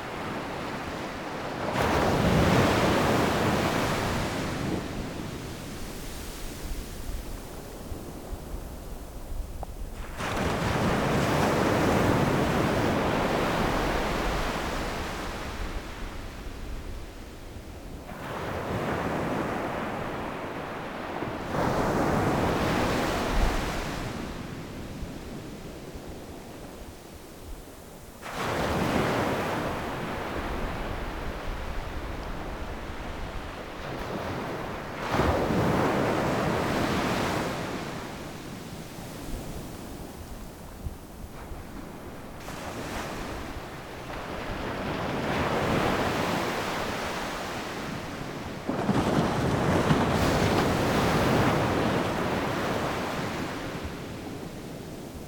Kilkeel Beach
Really close to the waves